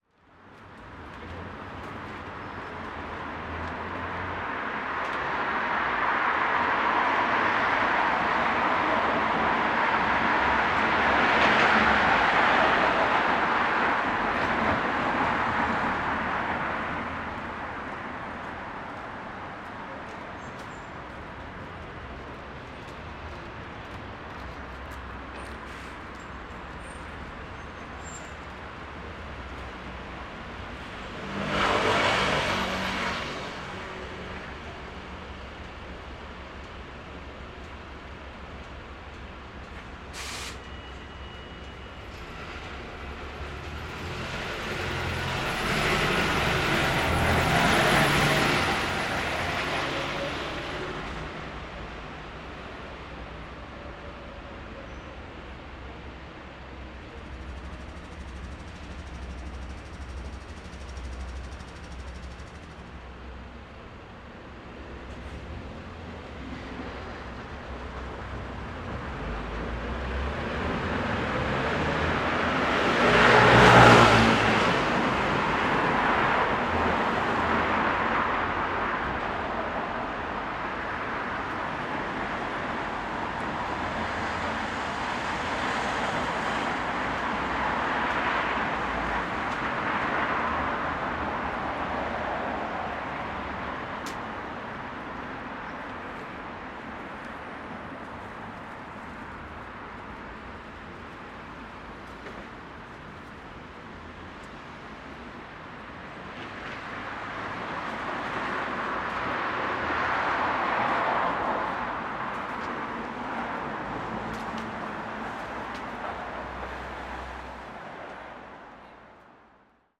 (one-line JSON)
{"title": "Bradbury Pl, Belfast, UK - Laverys Bar Belfast", "date": "2020-10-16 17:52:00", "description": "Recording in front of Laverys Bar which is closed. Space is much quieter, however, there is still some movement from pedestrians and vehicles. Beginning of Lockdown 2 in Belfast.", "latitude": "54.59", "longitude": "-5.93", "altitude": "8", "timezone": "Europe/London"}